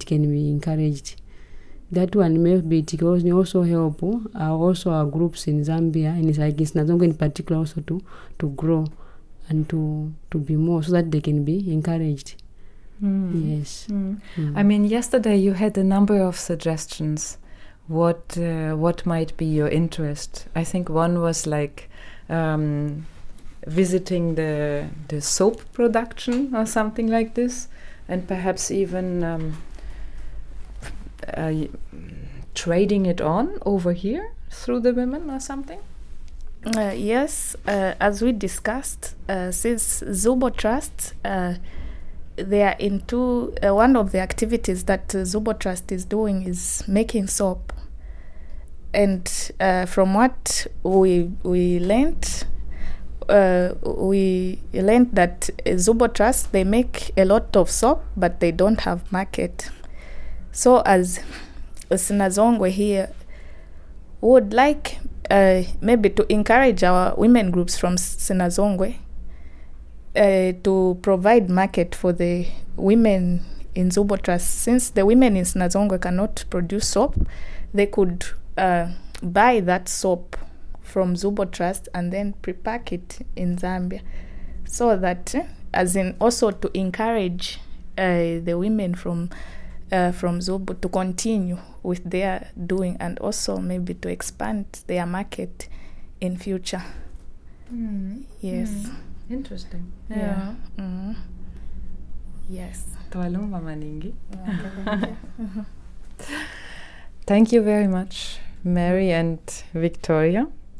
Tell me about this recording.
...we are at the at the Civic Centre of Sinazongwe, "the Boma", talking to Mary Mwakoi and Victoria Citalu from the Department of Community Development… this clip is from the end of a longer conversation about women clubs and their activities in the area…. here, we are getting to talk about the limits of such activities and how contacts and exchange among the women across the waters, that is from Binga and from Sinazongwe might improve the lives of women on both sides of the Zambezi... more from this interview: